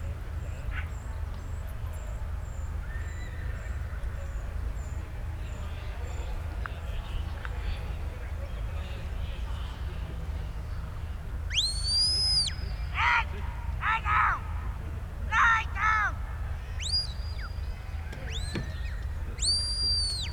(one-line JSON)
{"title": "Back Ln, York, UK - Ryedale Show ... sheepdog trials ...", "date": "2017-07-25 11:30:00", "description": "Sheepdog trials ... open lavalier mics clipped to sandwich box ... plenty of background noise ...", "latitude": "54.25", "longitude": "-0.96", "altitude": "50", "timezone": "Europe/London"}